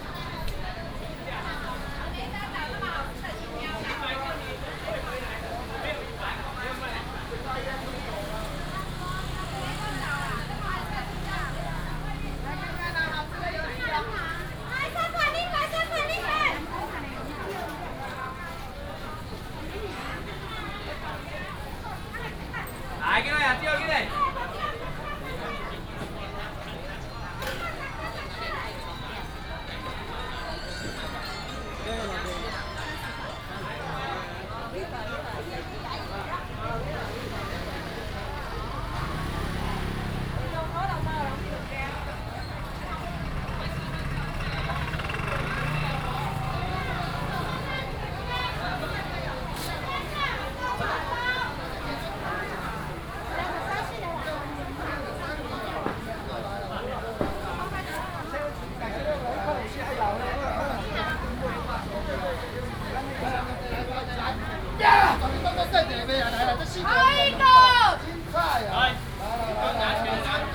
龜山區中和南路, Taoyuan City - traditional markets
Traditional market, vendors peddling, traffic sound